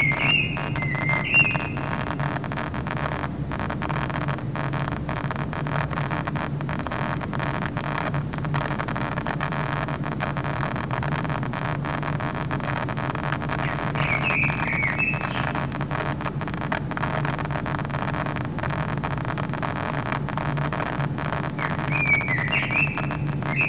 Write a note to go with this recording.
Drone air conditioner, crackling on the loud speaker, bird